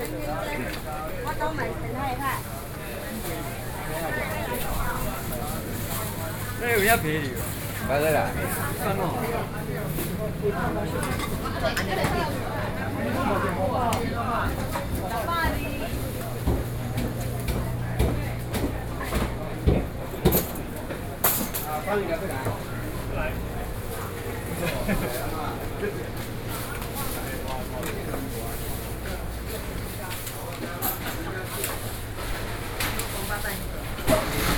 Ln., Sec., Jinshan S. Rd., Zhongzheng Dist., Taipei City - Traditional markets
Taipei City, Taiwan, 3 November 2012, ~08:00